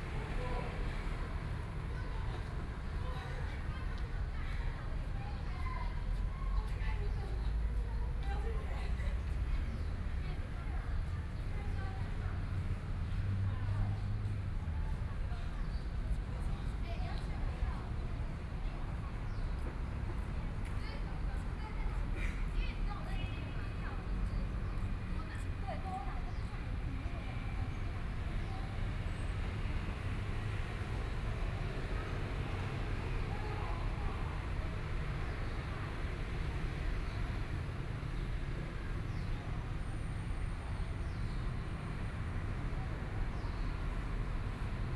Traffic Sound, Sitting below the track, MRT train passes
Sony PCM D50+ Soundman OKM II
Beitou District, Taipei City, Taiwan, 18 July 2014, ~18:00